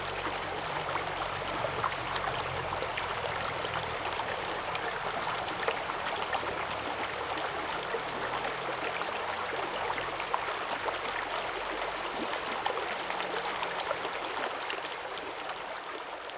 中路坑溪, 桃米里 - Stream sound
The sound of water streams
Zoom H2n MS+XY